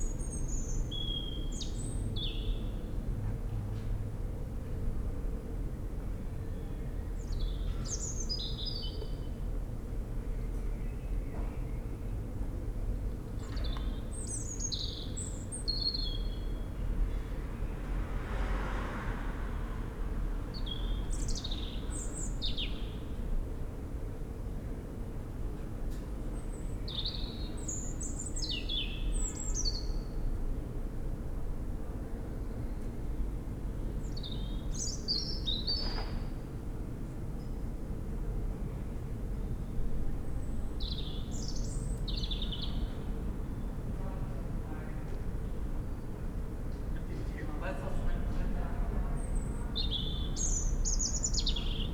Köln, Maastrichter Str., backyard balcony - robin
A Robin in the backyard, evening ambience
(Sony PCM D50, DPA4060)
Köln, Deutschland, 1 April, 19:34